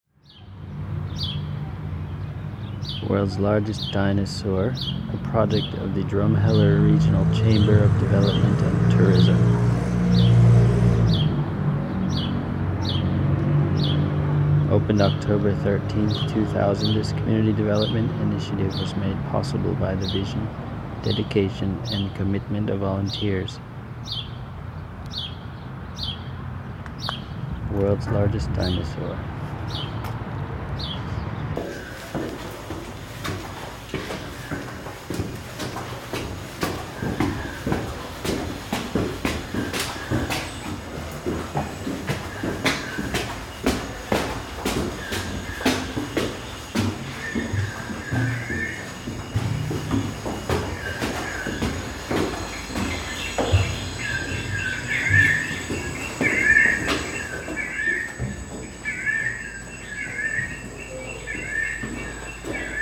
worlds largest dinosaur, Drumheller Alberta
reading the description and climbing up into the mouth of the worlds largest dinosaur